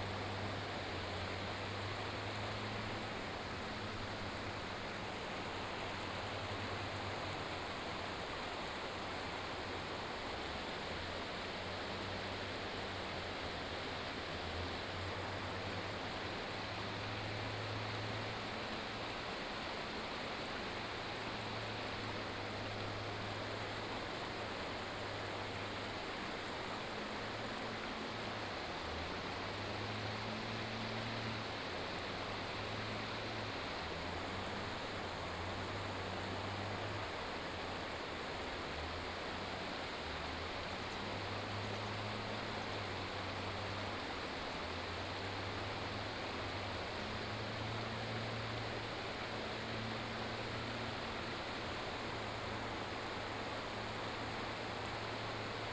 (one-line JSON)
{"title": "福南橋, Nanzhuang Township - stream sound", "date": "2017-09-15 09:17:00", "description": "stream, On the bridge, Lawn mower, Binaural recordings, Sony PCM D100+ Soundman OKM II", "latitude": "24.58", "longitude": "120.99", "altitude": "255", "timezone": "Asia/Taipei"}